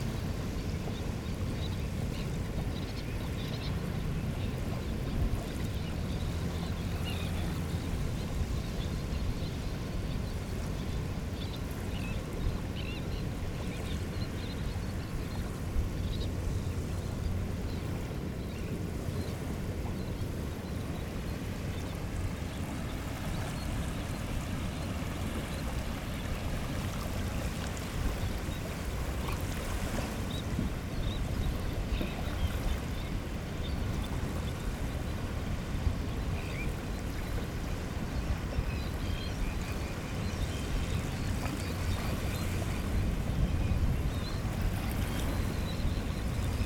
Unnamed Road, Gdańsk, Poland - Mewia Łacha 4
Mewia Łacha 4